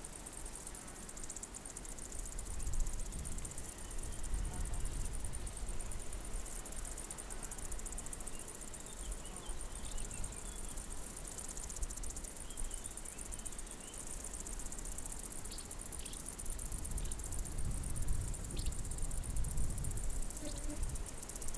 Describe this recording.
Salto de Castro, ambiencia diurna. Mapa sonoro do Rio Douro. Soundscape of Slato de Castro. Here the Douro meets Portugal for the first time. Douro River Sound Map